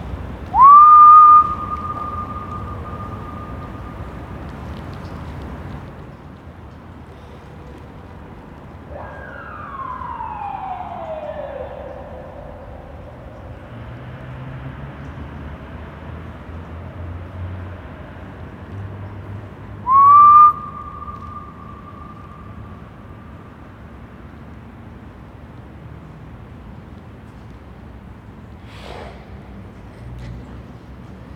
new highway tunnel, Istanbul
new highway tunnel that runs several km long. Muharrem convinced the guard to let us walk in 100m